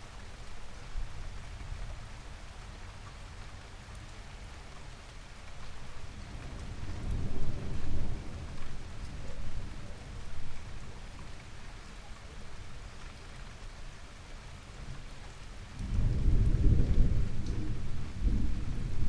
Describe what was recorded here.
Recorded from an attic room in a terraced house using LOM microphones